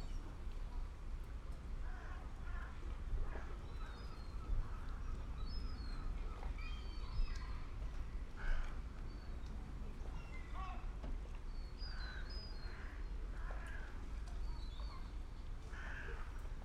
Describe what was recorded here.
MOUETTES ET CLAPOTIS dans le port de Reine. Il est midi et tout est calme, pêcheurs, touristes et circulation. Original record MixPré6II + DPA 4041 dans Cinela PIA2